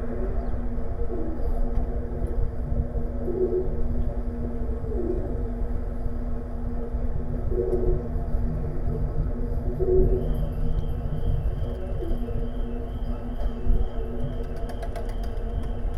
{"title": "Tallinn, Baltijaam railside fence - Tallinn, Baltijaam railside fence (recorded w/ kessu karu)", "date": "2011-04-22 15:12:00", "description": "hidden sounds, resonance inside two sections of a metal fence along tracks at Tallinns main train station", "latitude": "59.44", "longitude": "24.74", "altitude": "19", "timezone": "Europe/Tallinn"}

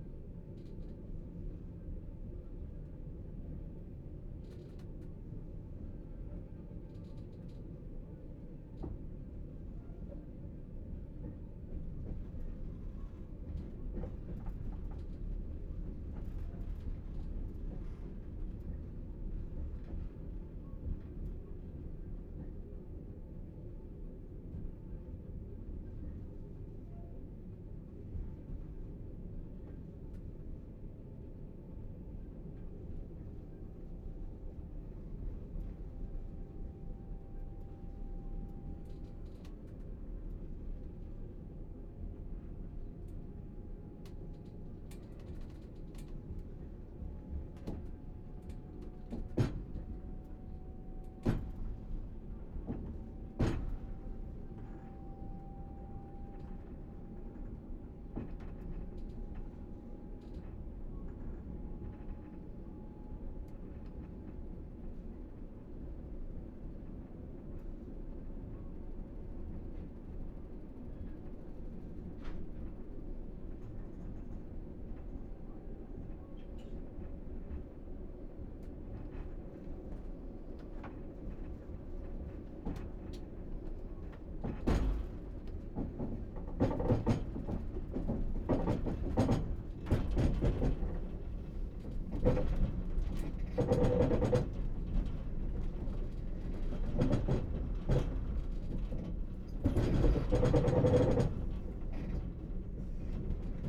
{"title": "Somewhere between Tashkent and Bukhara, Uzbekistan - Night train, stopping and starting", "date": "2009-08-17 05:15:00", "description": "Night train, stopping and starting, juddering and shaking", "latitude": "39.98", "longitude": "67.44", "altitude": "703", "timezone": "Asia/Samarkand"}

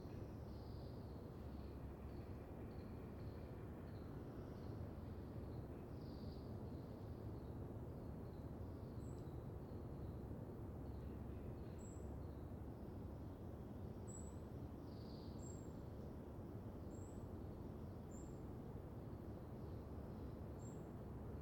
{"title": "Valdivia, Chili - LCQA AMB PUNTA CURIÑANCO EL OLIVILLO MORNING BIRDS PRECISE MS MKH MATRICED", "date": "2022-08-24 12:00:00", "description": "This is a recording of a forest 'el Olivillo' in the Área costera protegida Punta Curiñanco. I used Sennheiser MS microphones (MKH8050 MKH30) and a Sound Devices 633.", "latitude": "-39.71", "longitude": "-73.41", "altitude": "152", "timezone": "America/Santiago"}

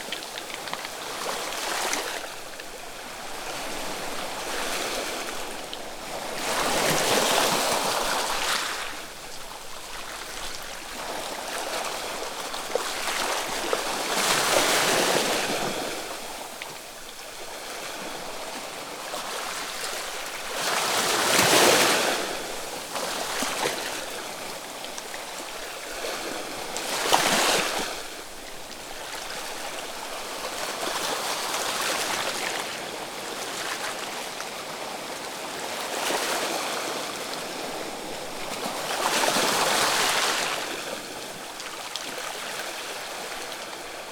{"title": "Daintree Rainforest, QLD, Australia - Waves at the mouth of Emmagen Creek", "date": "2016-12-16 11:00:00", "description": "hoping a crocodile wouldn't emerged from the sea and engulf me..", "latitude": "-16.04", "longitude": "145.46", "timezone": "Europe/Berlin"}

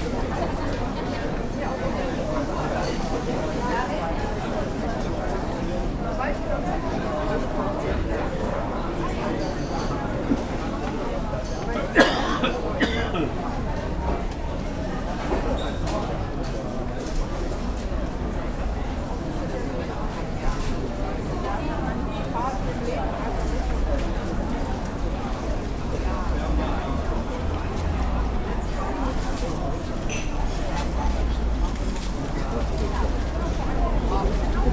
{"title": "cologne, breite strasse, an bäckerei", "date": "2008-09-07 11:16:00", "description": "am samstag nachmittag in der einkaufs fussgänger passage - schritte, menschenmengen, tütenrascheln\nsoundmap nrw - social ambiences - sound in public spaces - in & outdoor nearfield recordings", "latitude": "50.94", "longitude": "6.95", "altitude": "61", "timezone": "Europe/Berlin"}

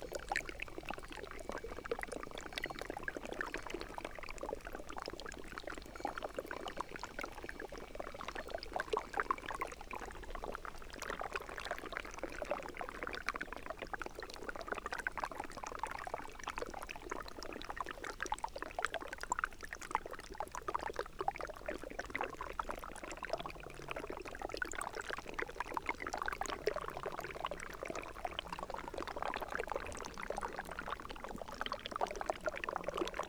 {"title": "해빙강 thawing rivulet", "date": "2021-01-24 12:00:00", "description": "...ice melt flows beneath a frozen rivulet", "latitude": "37.98", "longitude": "127.63", "altitude": "226", "timezone": "Asia/Seoul"}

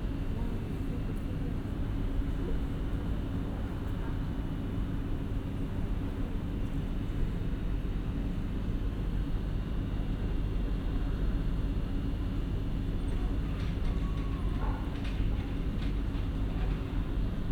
{"title": "Bahnhof Erlangen - destination Berlin", "date": "2009-11-15 14:58:00", "description": "waiting for the train after a night in Erlangen... next stop Berlin...", "latitude": "49.60", "longitude": "11.00", "altitude": "278", "timezone": "Europe/Berlin"}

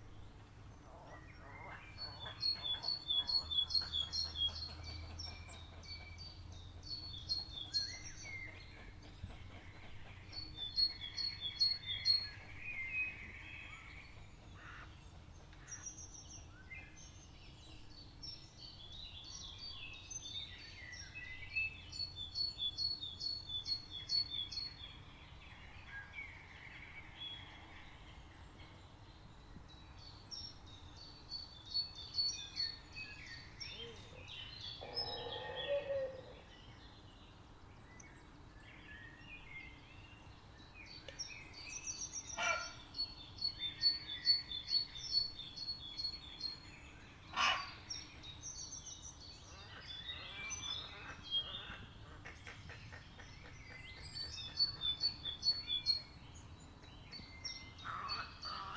{"title": "Shillingstone, Dorset, UK - Herons Nesting", "date": "2012-04-03 12:49:00", "description": "Heron's nesting. Recorded on a Fostex FR-2LE Field Memory Recorder using a Audio Technica AT815ST and Rycote Softie.", "latitude": "50.92", "longitude": "-2.26", "altitude": "66", "timezone": "Europe/London"}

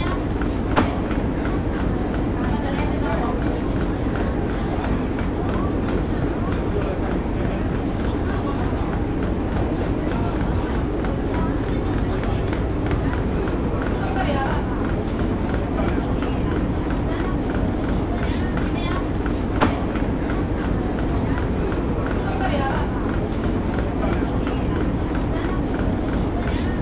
{"title": "Wang Burapha Phirom, Phra Nakhon, Bangkok, Thailand - drone log 09/03/2013", "date": "2013-03-09 12:30:00", "description": "Chao Phraya Express Boat\n(zoom h2, build in mic)", "latitude": "13.72", "longitude": "100.51", "timezone": "Asia/Bangkok"}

